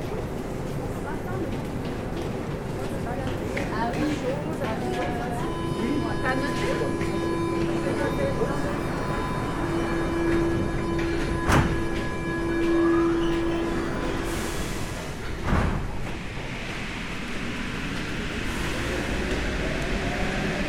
arrivée dans le métro M2 à la Sallaz, annonce, sortie de la rame, fermeture des portes
micros Schoeps
Vaud, Switzerland, 14 November 2019